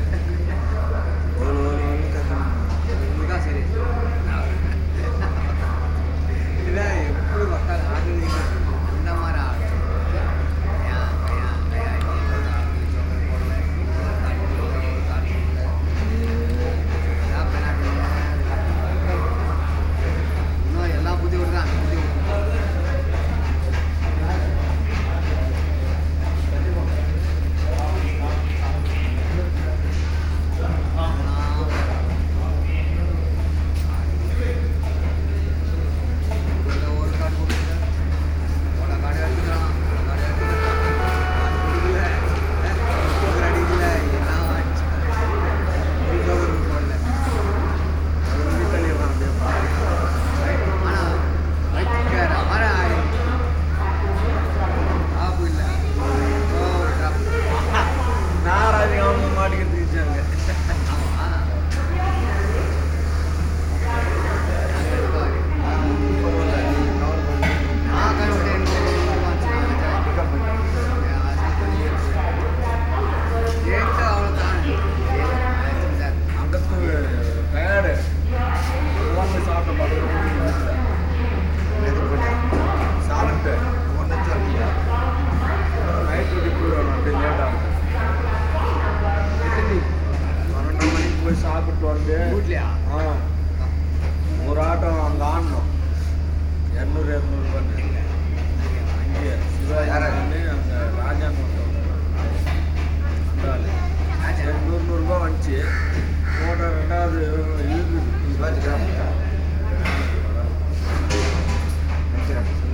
Bangalore, BANGALORE CY JN railway station, prayer snack & chai
India, Karnataka, Bangalore, railway station, train